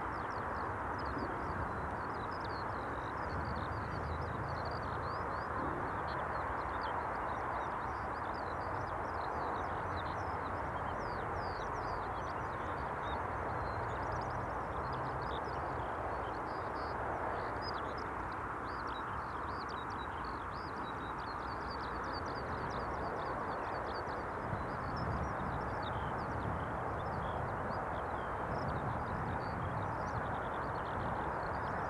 Contención Island Day 63 outer southwest - Walking to the sounds of Contención Island Day 63 Monday March 8th
The Drive Moor Place Woodlands Woodlands Avenue Westfield Grandstand Road
A lark sings
from an unseen perch
somewhere in the rough grass
The squall hits
a chocolate labrador comes to explore
Crows criss-cross the grassland below me
England, United Kingdom, 8 March, 10:47